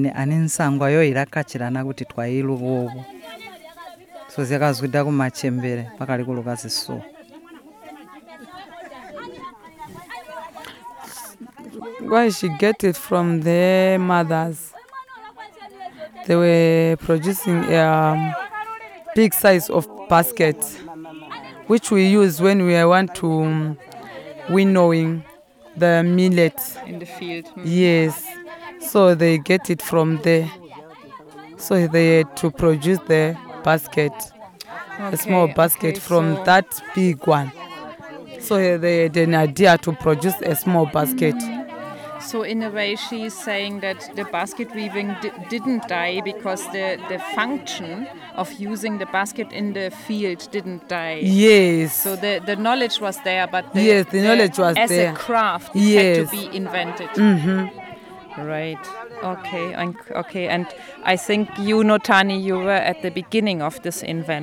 together with Donor Ncube, we talk to Notani Munkuli, an experienced weaver from Bunsiwa. Zubo Trust had sent her and five women from other wards for further training to Lupane Women Centre; now she's passing on her skills; Notani knows a lot about the practice of weaving in this area; what can she tell us about the history of the craft....?
26 October 2018, ~13:00